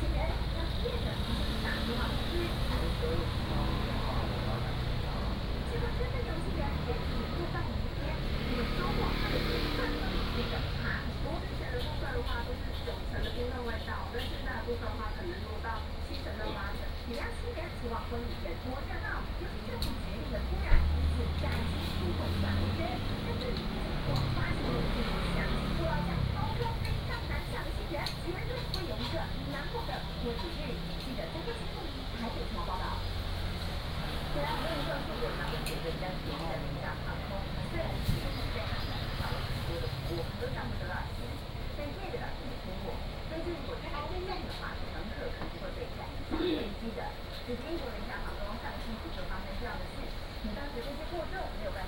Guangming Rd., Magong City - In the restaurant
In the restaurant
Penghu County, Taiwan, October 2014